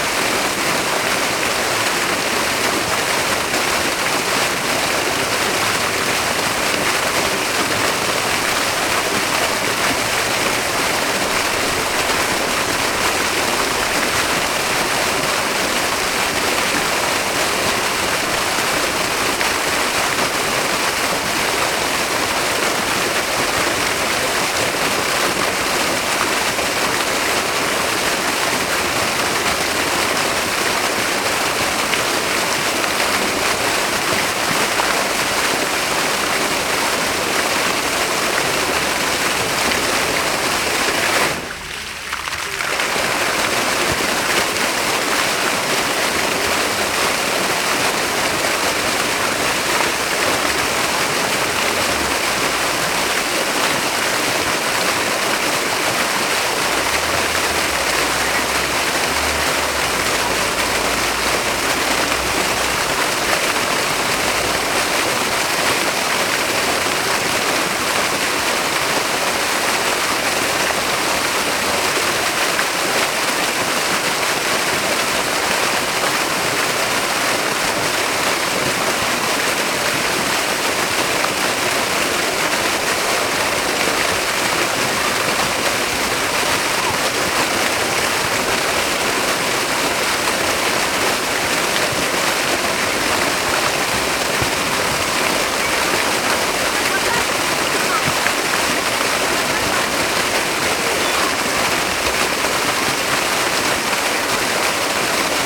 Fontaine Square Marcel-Rajman - Rue de la Roquette
Square Marcel-Rajman, fontaine à 3 étages, square de la Roquette - Paris